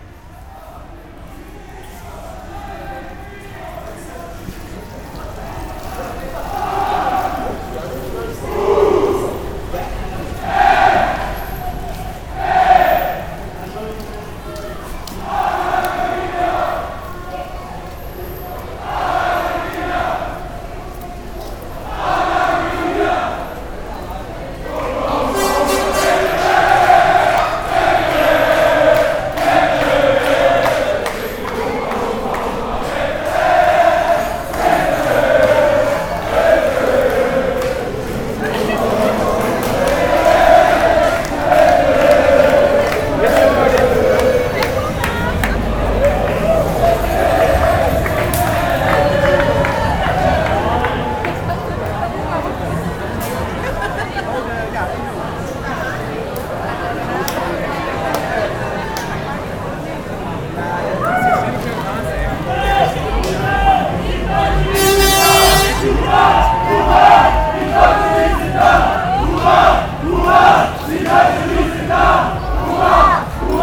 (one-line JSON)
{"title": "amsterdam, lijnbaansgracht, german soccer fans", "date": "2010-07-07 10:18:00", "description": "a party of german soccer fans after the quarter final win over argentinia at the wm 2010\ninternational city scapes - social ambiences and topographic field recordings", "latitude": "52.36", "longitude": "4.88", "altitude": "-1", "timezone": "Europe/Amsterdam"}